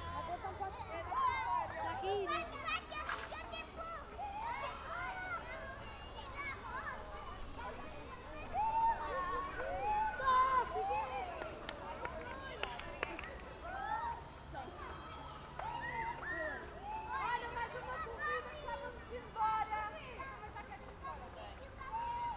playground, early summer evening
Lisbon, Portugal, 3 July 2010